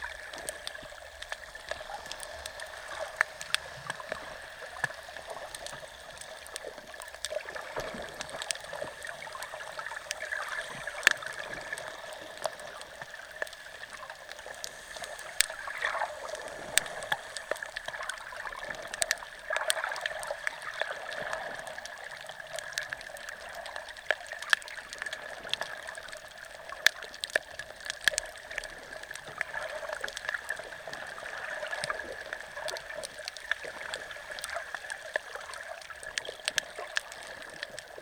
Bundeena, NSW, Australia - (Spring) Inside Bundeena Bay At Midday
I'm not sure what all the sound sources are. There were lots of little fish around the microphone so I'm assuming they were one of the vocalists.
Two JrF hydrophones (d-series) into a Tascam DR-680.
Bundeena NSW, Australia, September 2014